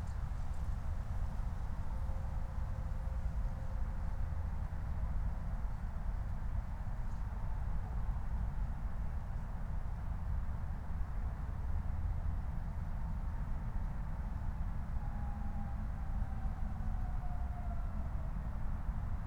Moorlinse, Berlin Buch - near the pond, ambience
17:19 Moorlinse, Berlin Buch